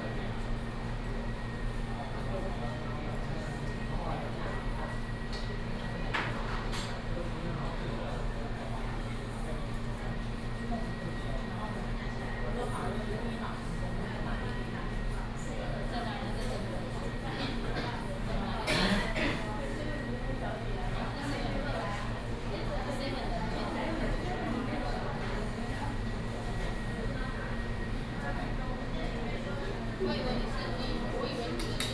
Ln., Sec., Xinyi Rd., Taipei City - In the restaurant
In the restaurant, air conditioning noise